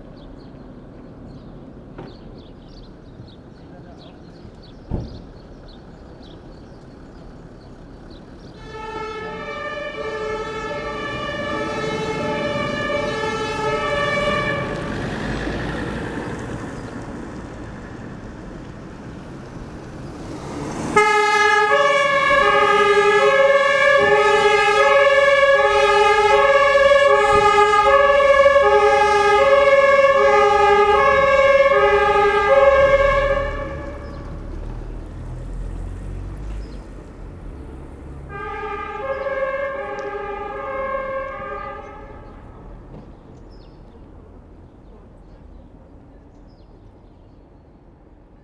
Berlin: Vermessungspunkt Maybachufer / Bürknerstraße - Klangvermessung Kreuzkölln ::: 03.02.2012 ::: 10:30